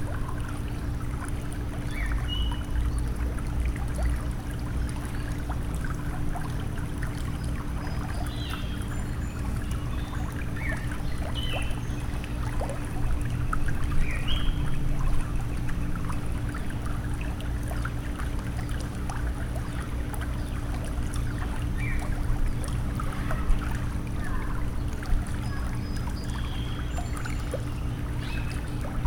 Sag Harbor Hills, Sag Harbor, NY, USA - Birds Water Machines
A garden, Sag Harbor Hills, Sag Harbor NY. Using Olympus LS12.
New York, United States of America, 18 July